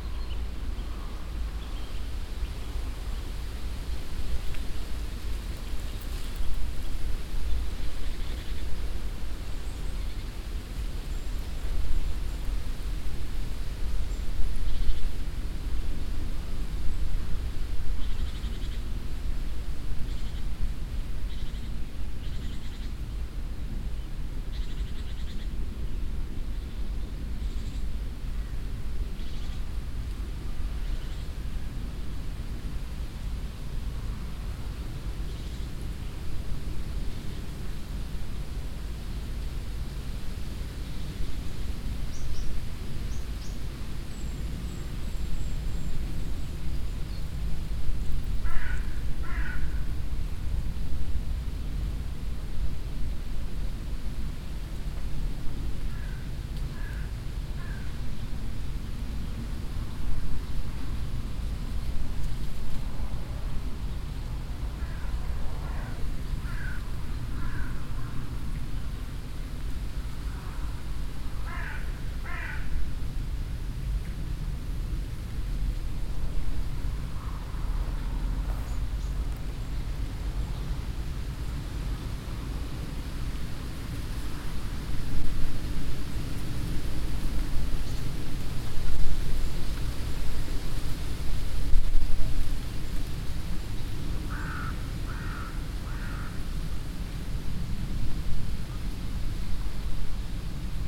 At a cow meadow. A group of trees and bushes moving in the fresh late summer evening wind coming from the nearby valley.. The silent, windy atmosphere with sounds of the leaves, different kind of birds and finally some crows that fly by.
Roder, Wind in den Büschen
Auf einer Kuhweide. Eine Gruppe von Bäumen und Büschen bewegen sich im frischen sommerlichen Spätabend, der aus dem nahen Tal kommt. Die stille windige Atmosphäre mit Geräuschen von Blättern, verschiedenen Arten von Vögeln und schließlich einige Krähen die vorbeifliegen.
Roder, vent dans les arbres
Sur une prairie à vaches. Un groupe d’arbres et de buissons bougent dans le vent frais d’un soir d’été venant de la vallée proche en fin de saison. L’ambiance silencieuse et venteuse avec le bruit des feuilles, différents oiseaux et, à la fin, des corbeaux qui passent.
Luxembourg, 17 September 2011, 18:18